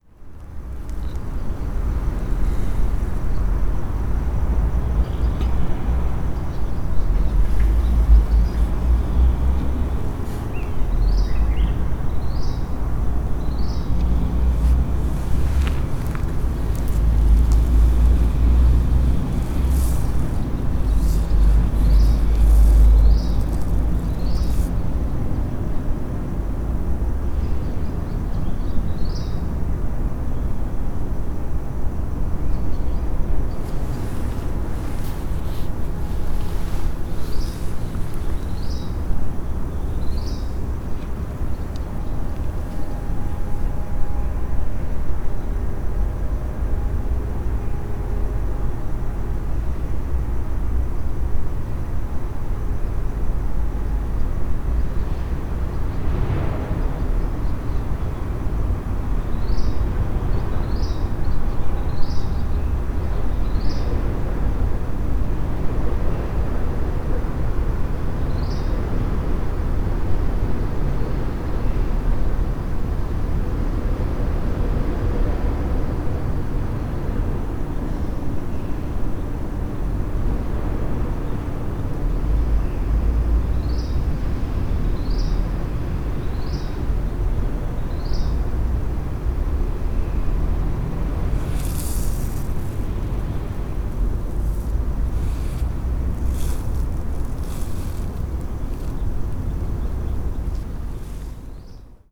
Inclinado Park, Topógrafos, Panorama, León, Gto., Mexico - Parque inclinado a las seis treinta y nueve de la mañana.
Some birds and urban noises at six thirty-nine in the morning at Inclinado Park.
I made this recording on April 13th, 2019, at 6:39 a.m.
I used a Tascam DR-05X with its built-in microphones and a Tascam WS-11 windshield.
Original Recording:
Type: Stereo
Algunas aves y ruidos urbanos a las seis y treinta y nueve de la mañana en el Parque Inclinado.
Esta grabación la hice el 13 de abril 2019 a las 6:39 horas.
Guanajuato, México, 13 April 2019, 06:39